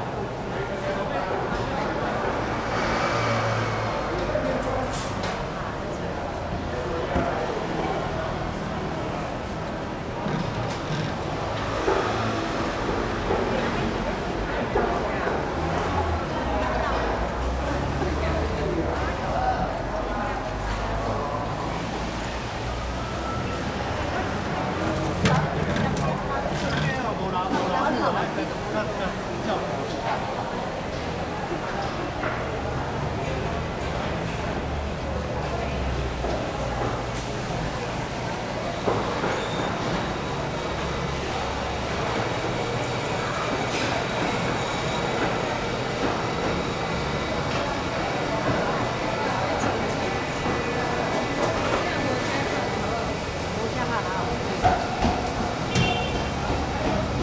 Walking in the traditional market, Traffic Sound
Zoom H4n +Rode NT4